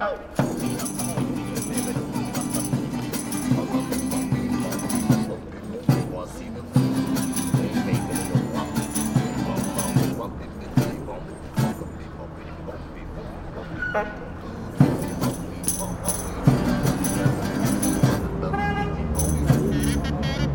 Rijeka, Street, OneManBand, Carnival2010
One Man Band
Grad Rijeka, Primorsko-Goranska županija, Hrvatska